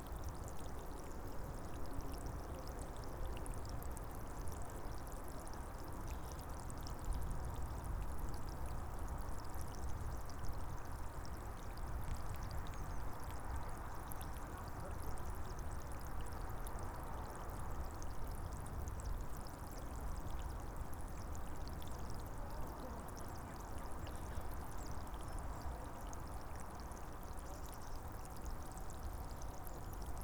Mud sound. A curious dog quickly arrives and stay close to recorder and run away. Voices from hikers. Nearby highway traffic noise, continuous. Wind noise towards the end.
Bruit de vase. Un chien curieux cours vers l’enregistreur et reste à proximité pendant un instant puis repars. Voix de marcheurs. Bruit d’autoroute proche, continue. Bruit de vent sur la fin
Pluneret, France, 24 July